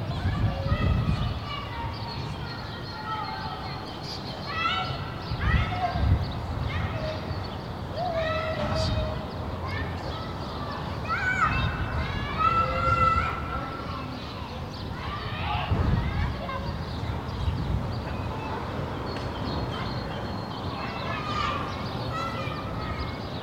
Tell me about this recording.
Recorded from the rooftop corner on a (finally) sunny hot day in Berlin. Sony PCM-100, wide angle